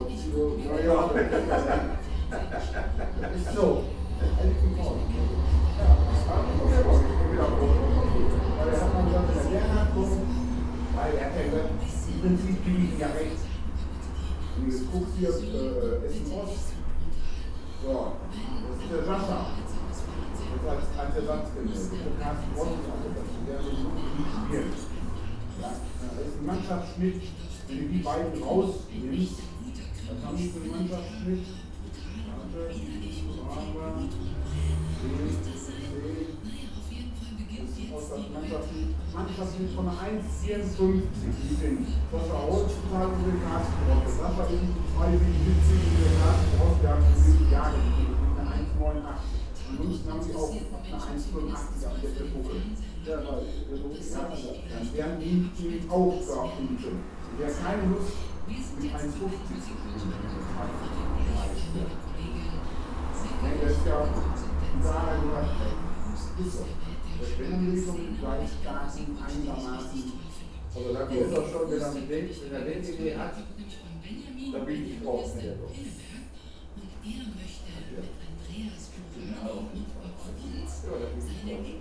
Rellinghausen, Essen, Deutschland - zum ratskeller
gaststätte zum ratskeller, sartoriusstr. 1, 45134 essen
Essen, Germany